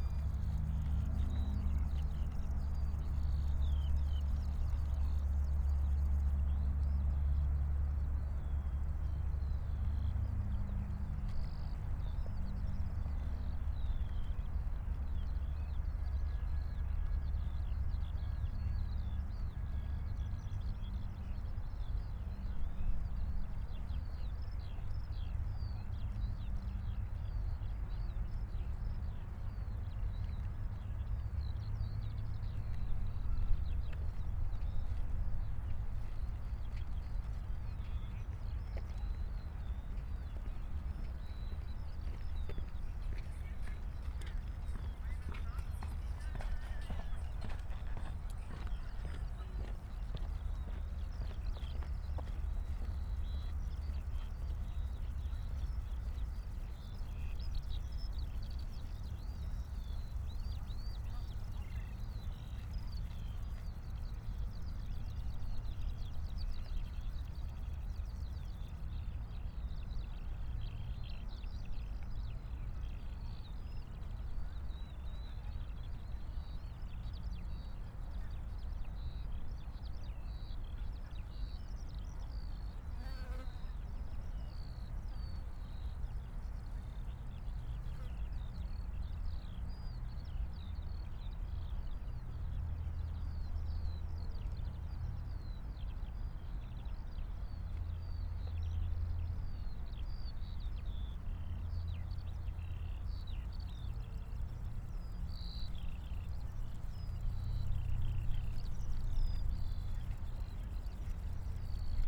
Tempelhofer Feld, Berlin - sky larcs, field ambience, WW2 aircraft
warm and sunny day in late spring, high grass, the meadows are protected from access by barrier tape because of bird protection, mainly sky larcs. 3 former WW2 aircrafts (not sure though..) passing-by, direction south east, maybe a transport from Tegel to Schönefeld airport.
(SD702, MKH8020 AB)
Deutschland, June 2020